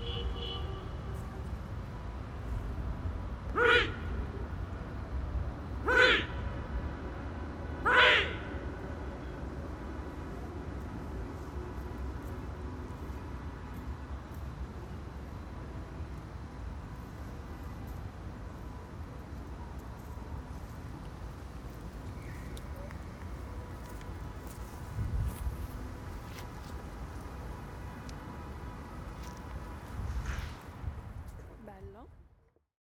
Wasteland, Anderlecht, Belgien - Echos in a Wasteland in Anderlecht
Talking to the red-brick walls: Echoes in a wild field with wedding noises in the distance
Anderlecht, Belgium, 15 October 2016, 16:49